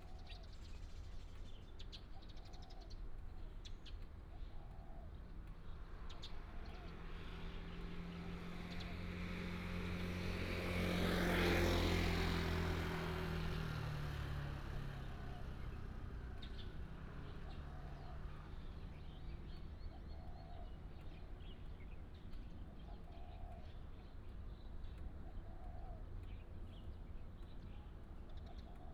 Traffic sound, sound of the birds